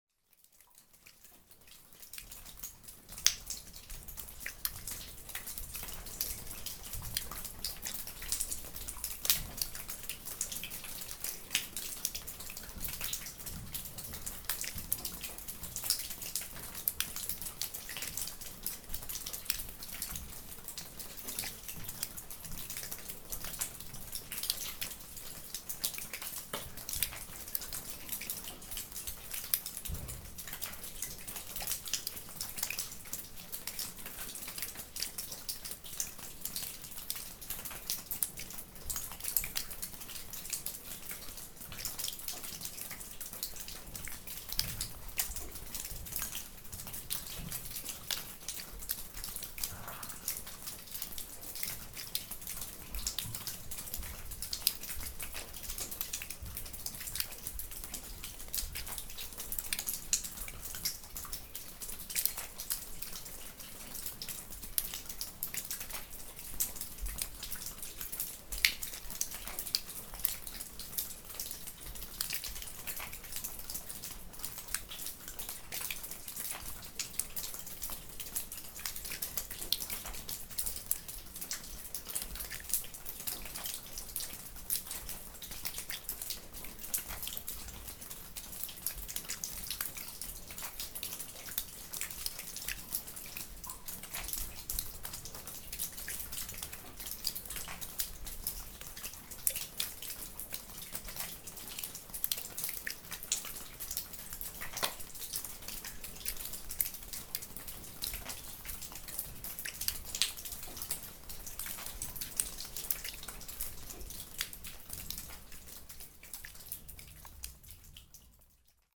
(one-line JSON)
{"title": "Rumelange, Luxembourg - Hutberg mine", "date": "2015-05-23 08:30:00", "description": "Very quiet ambience in an abandoned mine called Hutberg.", "latitude": "49.47", "longitude": "6.02", "altitude": "393", "timezone": "Europe/Luxembourg"}